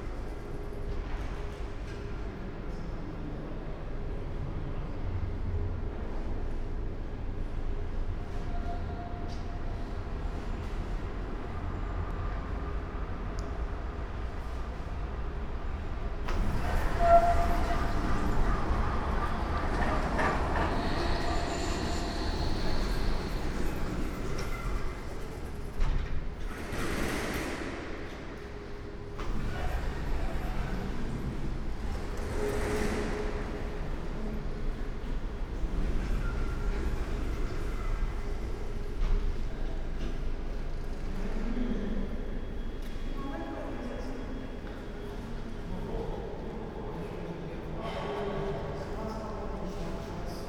strolling around at Halle, main station
(Sony PCM D50, Primo EM172)
27 October 2016, 20:15